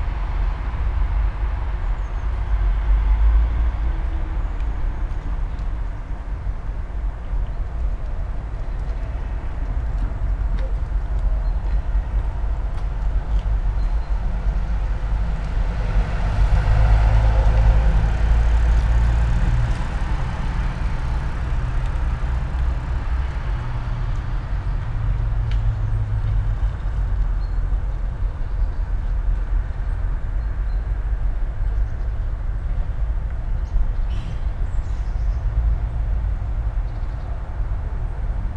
all the mornings of the ... - jul 29 2013 monday 07:20